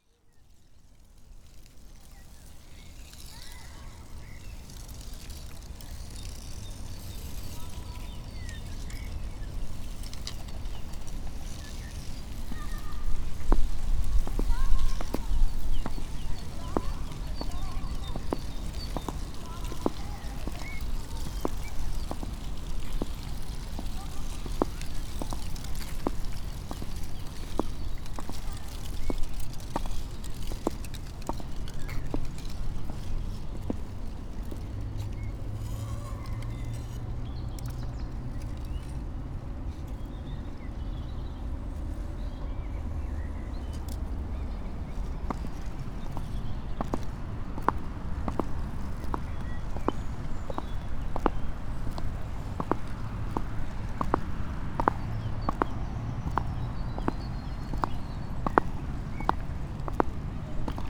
inside the pool, mariborski otok - with clogs ...
walking, dry leaf here and there, winds, two boys skating in smaller pool, birds
Kamnica, Slovenia, 1 April 2015, 16:10